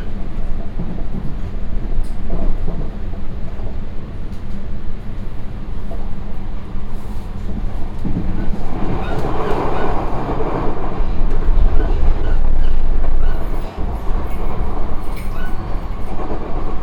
Heemstedestraat, Amsterdam, Netherlands - (296 BI) Metro ride
Binaural recording of a metro ride from Heemstedestraat.
Recorded with Soundman OKM on Sony PCM D100
Noord-Holland, Nederland, 16 September